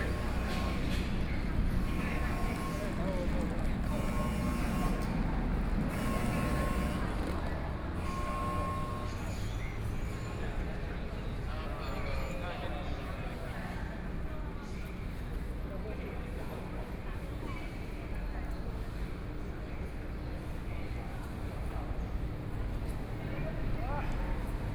中正區幸福里, Taipei City - Sit still
Student activism, Walking through the site in protest, People and students occupied the Legislative Yuan